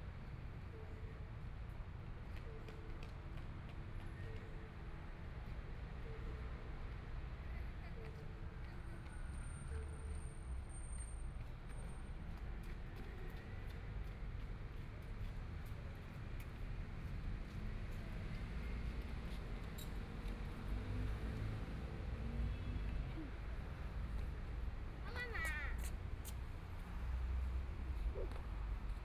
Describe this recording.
Sitting in the park, In children's play area, Environmental sounds, Motorcycle sound, Traffic Sound, Binaural recordings, Zoom H4n+ Soundman OKM II